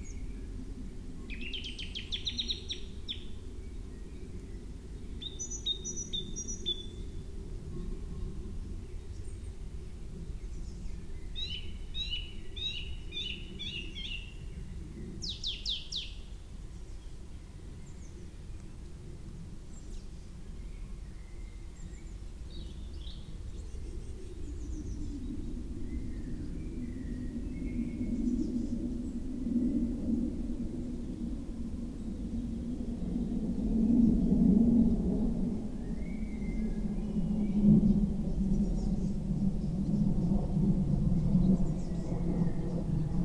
At the edge of Warburg Nature Reserve, Nettlebed, Oxfordshire, UK - Songbird, pheasants, aeroplane, rain
I was walking out of the Warburg Nature Reserve, where I had gone to listen. As I passed into an area with a clearing on the right, I heard the most beautiful bird song. I am not sure what bird this is, but I think it is from the songbird family? Perhaps some kind of Thrush? Its voice was being amplified beautifully by the shape of the space, the tree trunks, and the open cavern created by the clearing. I could hear pheasants distantly, too, and at some point there was a light rain. Just a dusting of it. After shuffling around to find the exact right place to stand and listen, I settled into a stillness, and was so quiet that a tiny mouse emerged from the ground near to me and began to bustle in the bushes. There we were, mouse, birds, planes, rain, space. Beautiful.
Henley-on-Thames, Oxfordshire, UK, 28 April 2014, 18:00